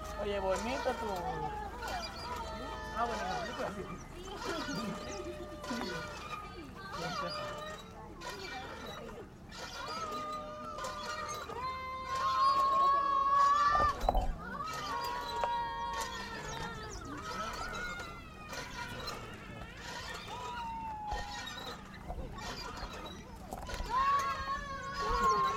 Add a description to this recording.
Ambiance recording from the set: Verano en la Ciudad del Rio.